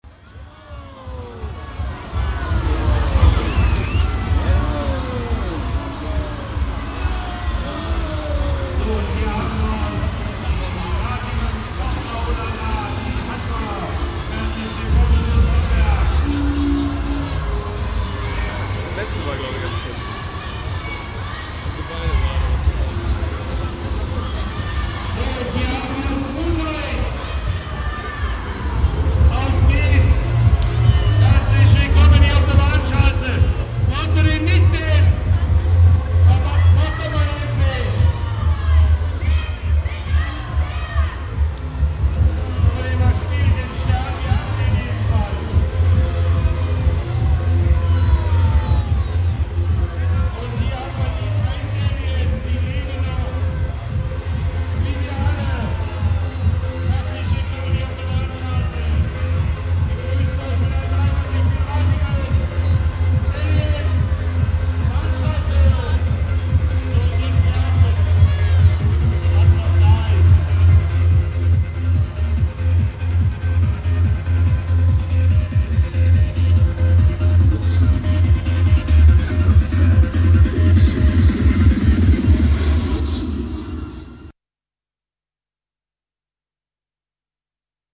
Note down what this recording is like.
karnevalszug mit balkonanimateur in ratingen am rosenmontag, project: social ambiences/ listen to the people - in & outdoor nearfield recordings